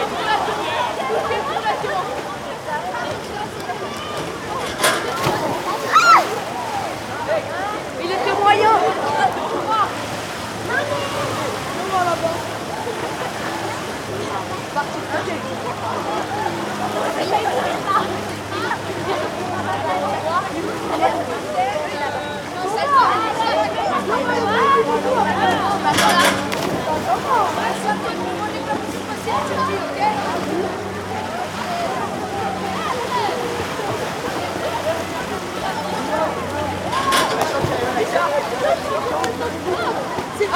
Bains de la motta, Fribourg - Swimming Pool during summer in Switzerland (Fribourg, Bains de la Motta)
Outside swimming pool in Switzerland during summer, voices, water sounds, splash and people swimming.
Recorded by an ORTF setup Schoeps CCM4 x 2
On Sound Devices 633
Recorded on 27th of June 2018
GPS: 46,80236244801847 / 7,159108892044742